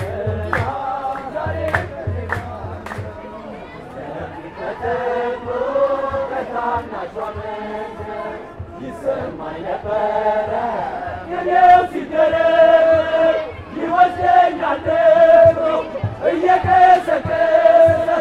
Kirkos, Addis Ababa, Éthiopie - Songs for wedding at the Ghion Hotel
Une dizaine d'hommes, une dizaine de femmes entonnent plusieurs chansons pour célébrer le mariage d'un couple qui se déroule. Beaucoup de caméras et d'appareils photos captent cet évènement, ainsi que les autres mariages autour. Il fait très beau. Un grand Soleil illumine la scène.